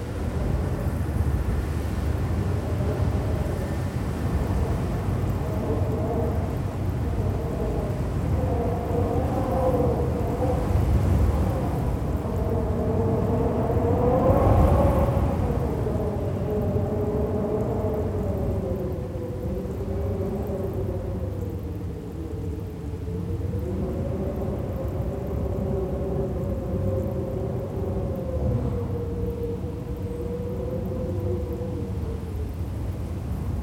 Tienen, Belgique - The wind in a nearly abandoned aircraft base
Recording of the wind in a nearly abandoned aircraft base. There's only a few landings during the Sunday. The other days everything is empty. In fact almost all the buildings are completely trashed. On the plains, there's a lot of wind today. The wind makes its way through a broken door. It's a cold sound, punctuated by slamming door and even a glass pane that breaks on the ground. Ouh ! Dangerous ! One hour recording is available on demand.